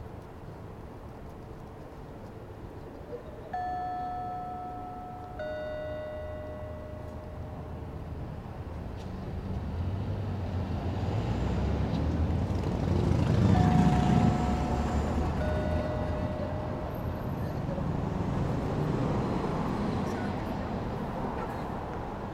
Walking home we espied the most amazing building and went to check it out; very vast and boat-like it has an enormous lobby that seems to be open very late and something of a grand, sheltered thoroughfare. A speaker by one of the exits plays this rather calming yet inexplicable chiming sound, so I listened for a while enjoying the continuity of its recorded bell sounds with the ebb and flow of traffic on the road.
Marunouchi, 都道406号線, 4 February 2017, 23:00